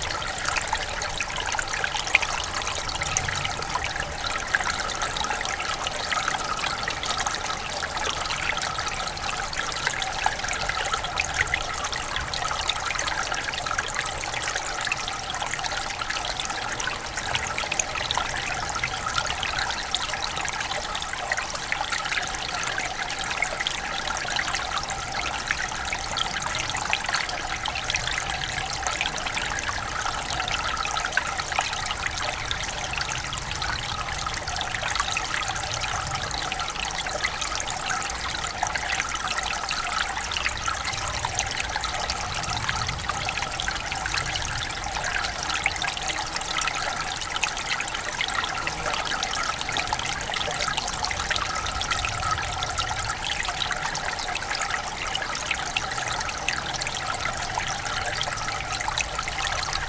Hoellegrundsbach near Bonaforth, Deutschland - 140809HoellegrundsbachMitte
placing the microphone on a stone in the stream, which doesn't has much water because of summer. Rode NT4 and Fostex FR2